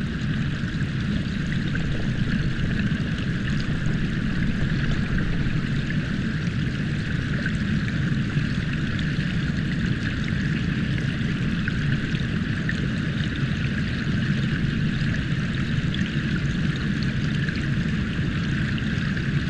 Kuldiga, waterfall through hydrophone
Early morning, the best time to visit the place! hydrophones in Venta's waterfall